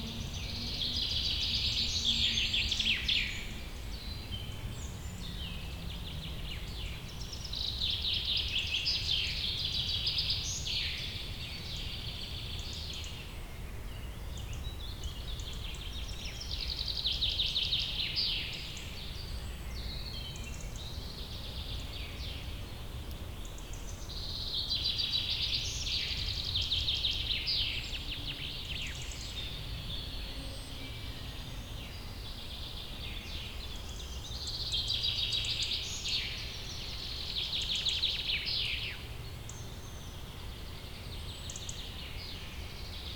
Reinhardswald, Deutschland, birds and airplanes - birds and airplanes
Fuldatal, Germany, 27 May